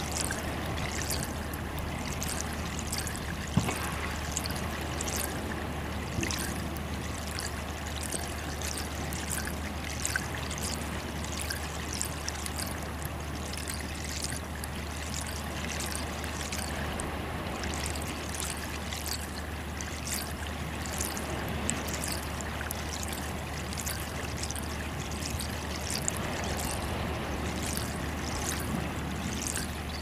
Niaqornat, Grønland - Melt water
The trickle of melt water in the pipe, leading to the fresh water supply of the village. Recorded with a Zoom Q3HD with Dead Kitten wind shield.
Greenland